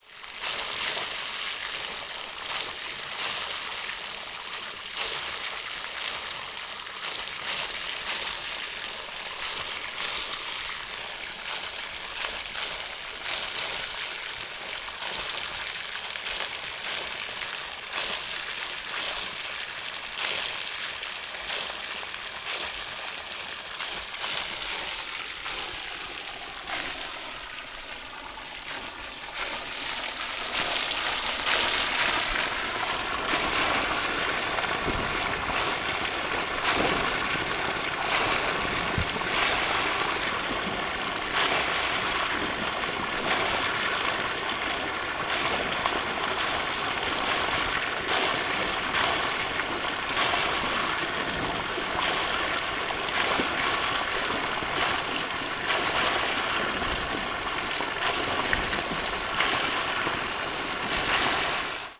north east from Funchal - water pipe
water spewing forcefully form a plastic pipe.
Funchal, Portugal, 6 May 2015, ~12:00